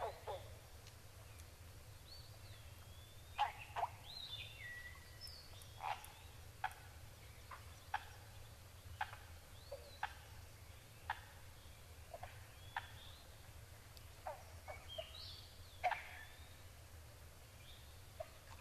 Wharton State Forest, NJ, USA - Bogs of Friendship, Part Three
Dawn settles in along a quiet bog in Friendship.